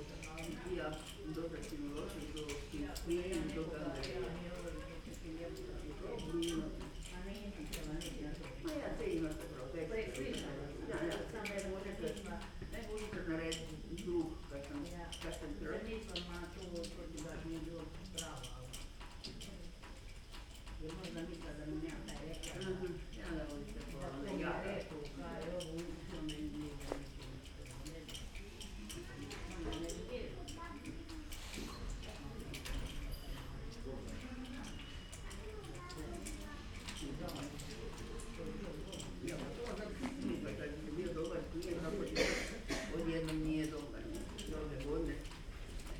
Skladiščna ulica, Sežana, Slovenija - Train stop and departure
Regional Train Trieste IT- Ljubljana SLO, Train Station Sežana At 9: 57.
Recorded with ZOOM H5 and LOM Uši Pro, Olson Wing array. Best with headphones.